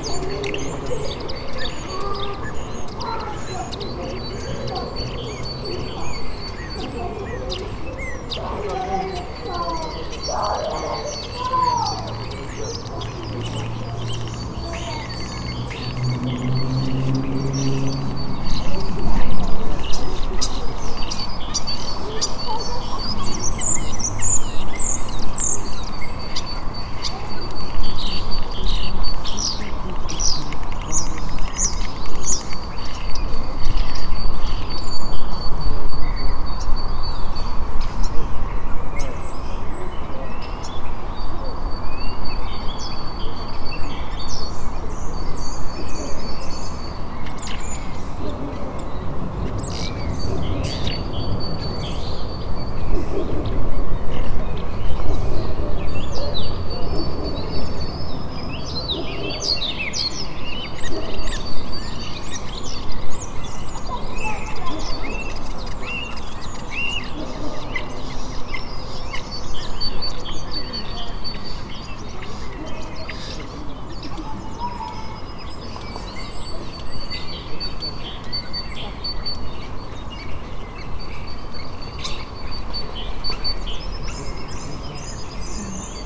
Jerzmanowskich Park, Kraków, Polska - (740) Easter afternoon in Jerzmanowskich Park
Birds (eurasian blue tit and european starling ) chirping in the park.
Recordred with Tascam DR-100 MK3
Sound posted by Katarzyna Trzeciak